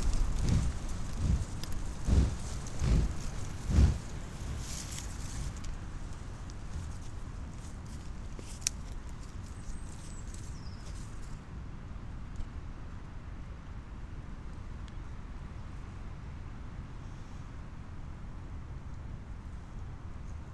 Pasiliai, Lithuania, breathing of european bison
the biggest wild animal in Europe: european bison. the beast is sniffing my microphones
Panevėžio apskritis, Lietuva, 14 March 2020, 13:15